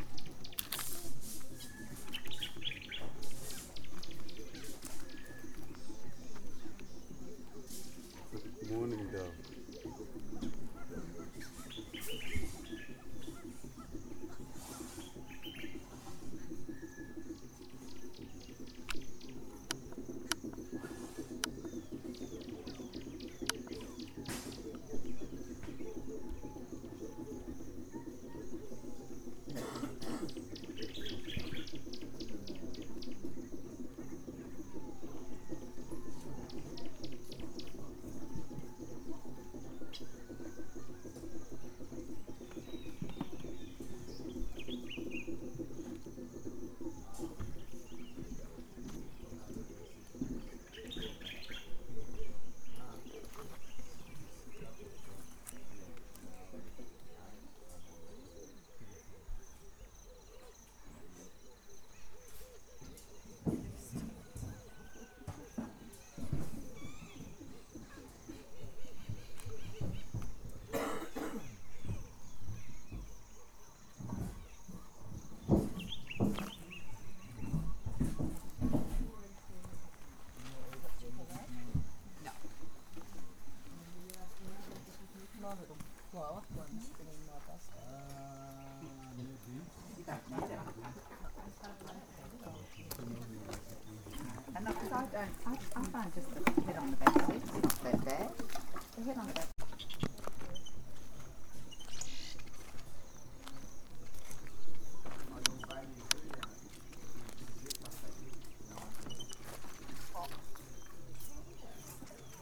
{
  "title": "Kavango, Namibia - morning drums and birds on the Okavango river shore",
  "date": "2013-10-20 05:48:00",
  "description": "Drums in a village close to the Okavango River, close to the Ngepi Camp, they played all night long and they are still playing at dawn.",
  "latitude": "-18.53",
  "longitude": "18.21",
  "altitude": "1170",
  "timezone": "Africa/Windhoek"
}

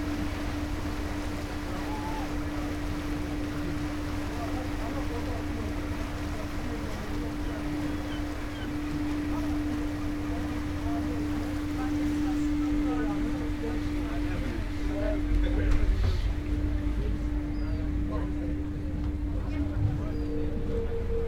{
  "title": "waves sounds at back of ferry, Istanbul",
  "date": "2010-03-01 17:38:00",
  "description": "white noise wave sound from the back of the ferry",
  "latitude": "40.93",
  "longitude": "29.06",
  "timezone": "Europe/Tallinn"
}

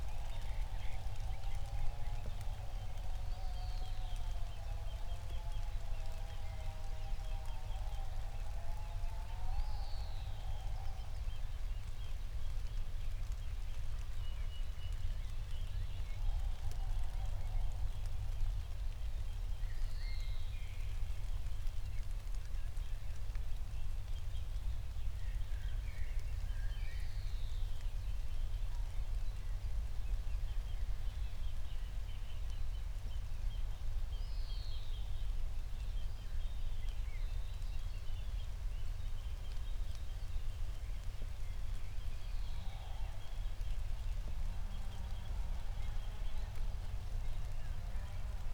{
  "title": "Berlin, Buch, Mittelbruch / Torfstich - wetland, nature reserve",
  "date": "2020-06-19 11:00:00",
  "description": "11:00 Berlin, Buch, Mittelbruch / Torfstich 1",
  "latitude": "52.65",
  "longitude": "13.50",
  "altitude": "55",
  "timezone": "Europe/Berlin"
}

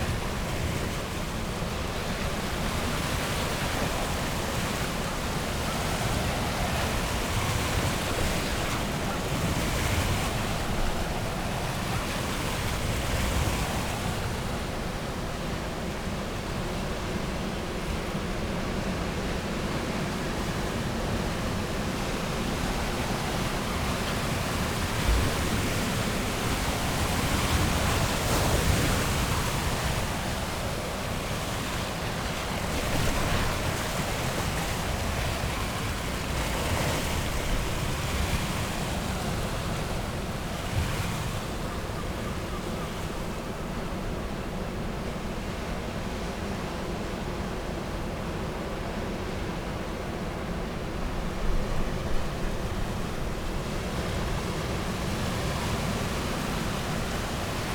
{
  "title": "East Lighthouse, Battery Parade, Whitby, UK - east pier ... outgoing tide ...",
  "date": "2019-03-08 09:50:00",
  "description": "east pier ... outgoing tide ... lavalier mics on T bar on fishing landing net pole ... over the side of the pier ...",
  "latitude": "54.49",
  "longitude": "-0.61",
  "timezone": "Europe/London"
}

